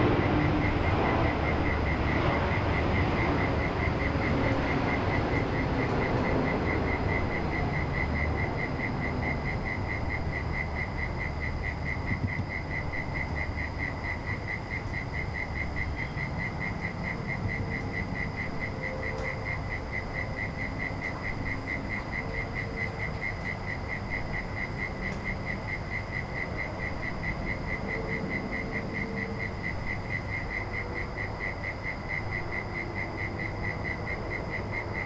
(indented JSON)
{
  "title": "Currumbin QLD, Australia - Sounds of the night",
  "date": "2015-03-27 18:45:00",
  "description": "This was recorded in my garden on a warm night in March. The sun had set. We live in between a creek and hinterland and also close to an airport. Crickets, dogs barking, planes, traffic, geckos, someone in the house typing on their laptop. Recorded on a Zoom H4N.",
  "latitude": "-28.14",
  "longitude": "153.48",
  "altitude": "5",
  "timezone": "Australia/Brisbane"
}